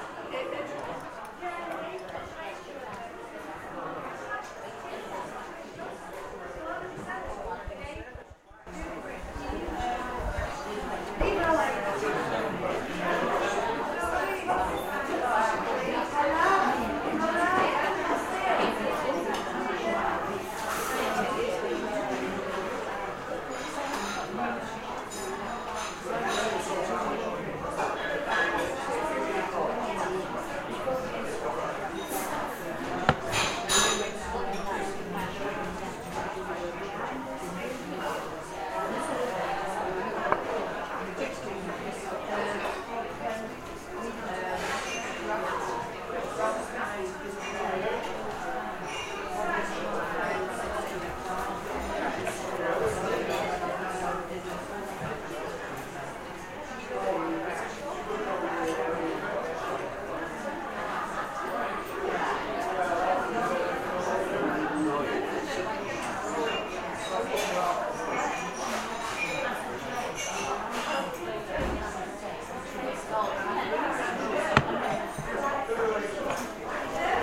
Inside pub off the High Street
Derbyshire, UK